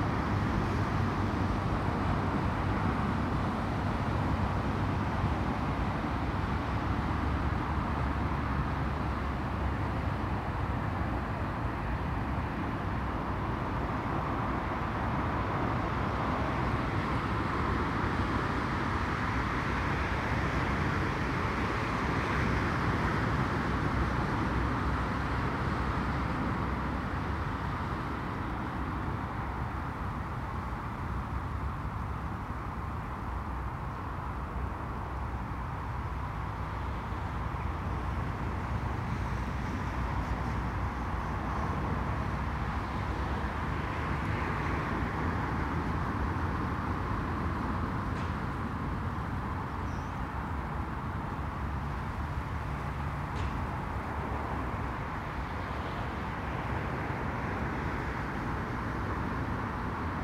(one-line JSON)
{"title": "Contención Island Day 24 outer southwest - Walking to the sounds of Contención Island Day 24 Thursday January 28th", "date": "2021-01-28 14:18:00", "description": "The Drive Moor Crescent High Street Grandstand Road\nJoggers stepping off into the road\nto avoid passing close\nVery wet underfoot\nA mixed flock of gulls\na low flying flock of geese\npresumably Canadas\nA flock of redwing\nin roadside trees as I return", "latitude": "55.00", "longitude": "-1.62", "altitude": "69", "timezone": "Europe/London"}